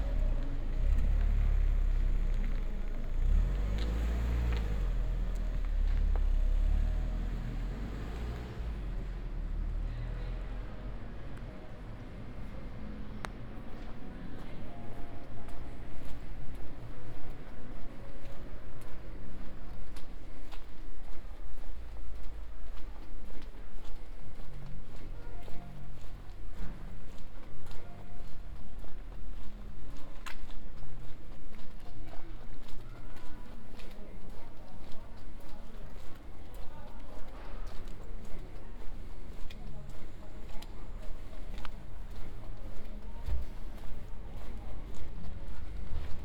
"Saturday market and plastic waste in the time of COVID19", Soundwalk
Chapter XXXIII of Ascolto il tuo cuore, città. I listen to your heart, city
Saturday April 4th 2020. Shopping in open market of Piazza Madama Cristina, including discard of plastic waste, twenty five days after emergency disposition due to the epidemic of COVID19.
Start at 3:52 p.m. end at 4:21 p.m. duration of recording 29'09''
The entire path is associated with a synchronized GPS track recorded in the (kml, gpx, kmz) files downloadable here: